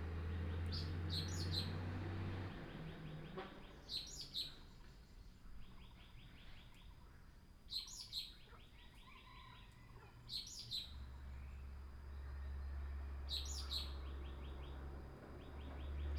{"title": "五城村, Yuchi Township, Nantou County - in the woods", "date": "2016-04-20 06:52:00", "description": "birds sound, frogs chirping, in the woods", "latitude": "23.93", "longitude": "120.90", "altitude": "764", "timezone": "Asia/Taipei"}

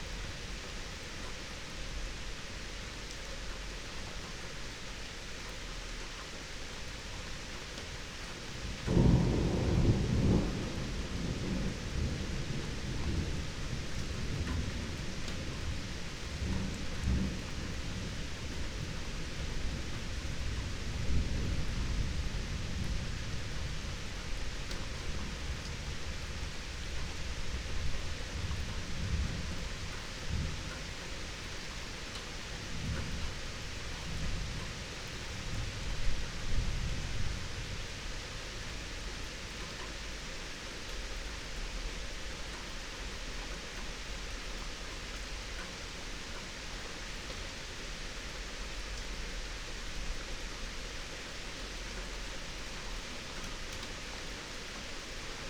{"date": "2022-08-15 19:21:00", "description": "19:21 Berlin Bürknerstr., backyard window - Hinterhof / backyard ambience", "latitude": "52.49", "longitude": "13.42", "altitude": "45", "timezone": "Europe/Berlin"}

